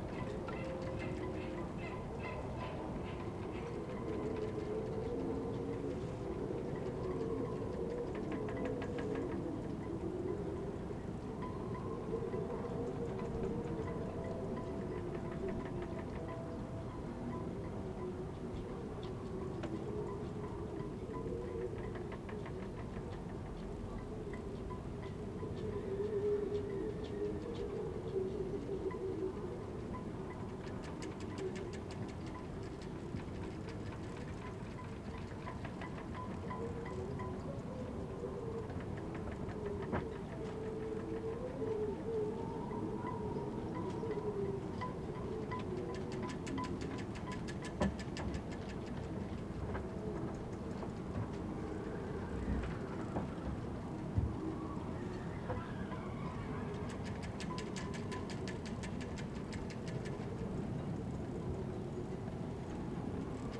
workum, het zool: marina, berth h - the city, the country & me: marina, aboard a sailing yacht
wind blows through the rigging
the city, the country & me: july 16, 2009